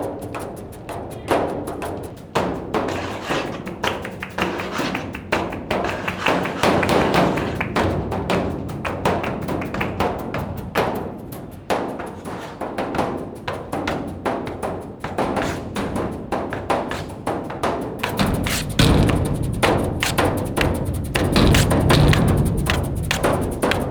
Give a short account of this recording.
Interaccion en el espacio público por el Grupo de Activacion Sonora en el dia mundial de la escucha WLD2016